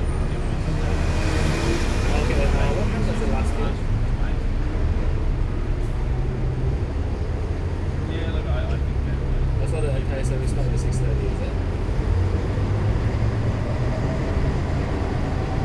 neoscenes: 433 bus on George